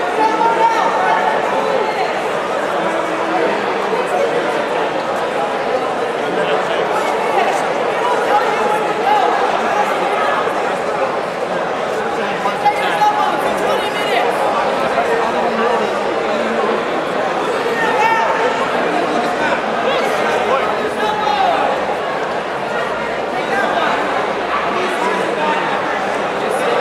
Dumbo, Brooklyn, NY, USA - Come Out and Play-Night Games 2014
recorded in Dumbo, literally Down Under the Manhattan Bridge Overpass, at Come Out and Play's 2014 Night Games. This was the assembly place for most of the games. Also, cars and occasional subway train pass overhead.